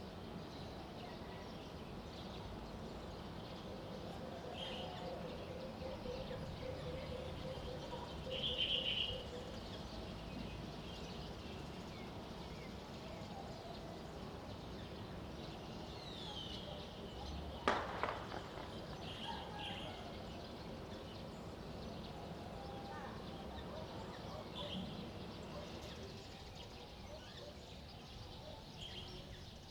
small village morning, birds chirping, Traffic sound, chicken crowing
Zoom H2n MS+XY